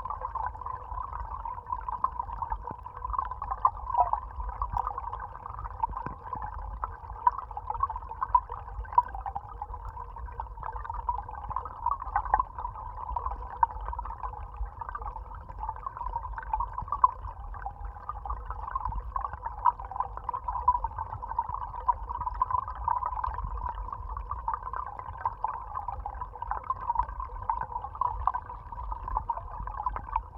Utena, Lithuania, streamlet in a swamp
swampy place you can reach only in winter. small streamlet, hydrophone recording.
4 December 2021, 16:10